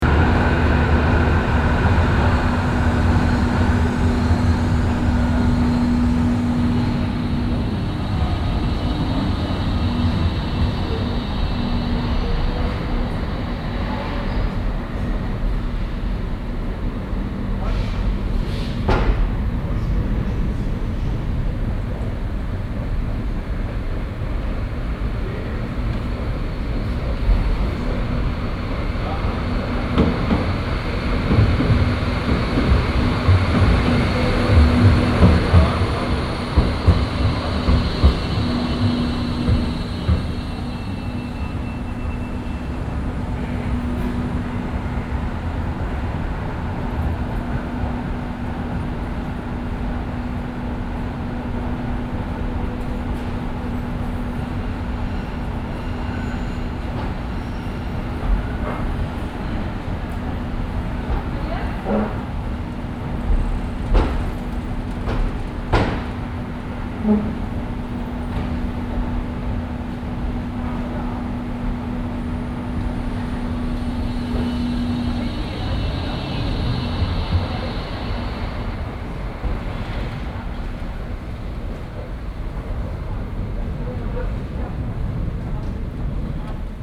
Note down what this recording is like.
An der U- Bahnhaltestelle Essen Rathaus. Einfahrt und Abfahrt eines Zuges. Projekt - Stadtklang//: Hörorte - topographic field recordings and social ambiences